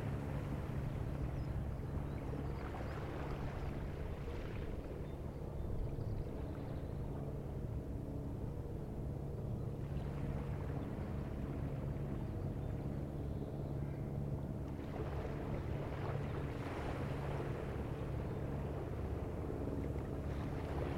Teignmouth, UK - Teignmouth Beach.
Teignmouth beach at the entrance to the Teign Estuary. Recorded with a Zoom H2N recorder and Rycote windshild. Sounds of the sea, a fishing boat and dogs barking.